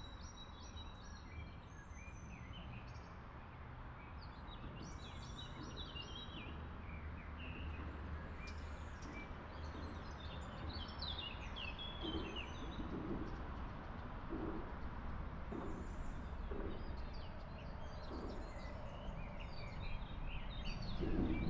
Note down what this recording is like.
DIN 90 -> AKG C414XLS -> SD USBpre2 -> Sony PCM D50